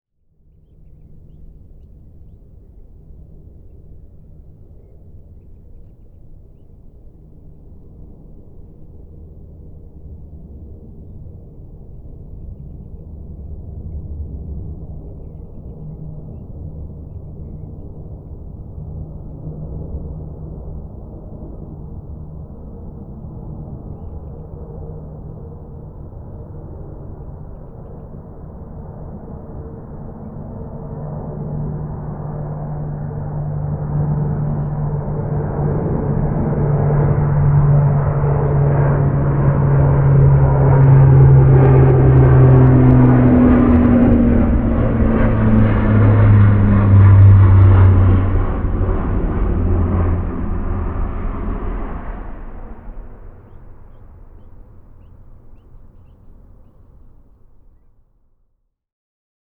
Low Plane, Malvern, Worcestershire, UK - Low Plane 2am
At 2am a large low flying aircraft approaches from Bredon Hill to the east and is instantly silenced as it crosses the line of the Malvern Hills. This is an unattended overnight recording. Recorded on a MixPre 6 II with 2x Sennheiser MKH 8020s.